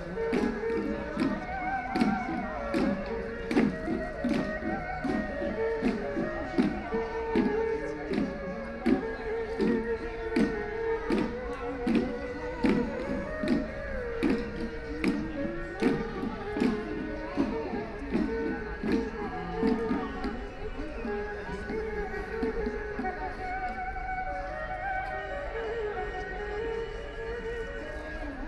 Heping Park, Hongkou District - Erhu and shǒu gǔ
Erhu and shǒu gǔ, Various performances in the park, Binaural recording, Zoom H6+ Soundman OKM II